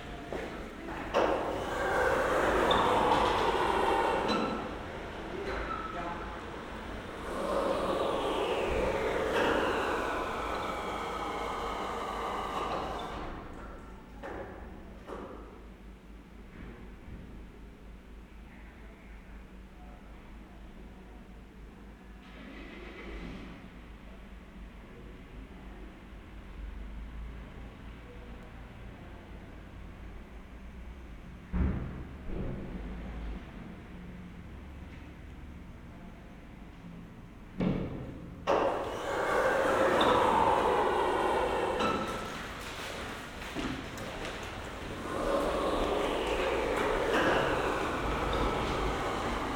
berlin, walterhöferstraße: zentralklinik emil von behring - the city, the country & me: emil von behring hospital, main entrance
automatic doorway at the main entrance, visitors
the city, the country & me: september 6, 2012